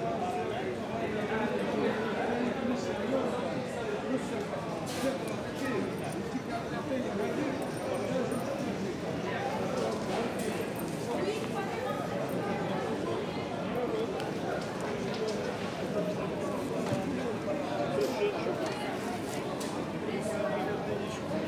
Noailles, Marseille, Frankreich - Marseille, Rue de Feuillants - Improvised street market
Marseille, Rue de Feuillants - Improvised street market.
[Hi-MD-recorder Sony MZ-NH900, Beyerdynamic MCE 82]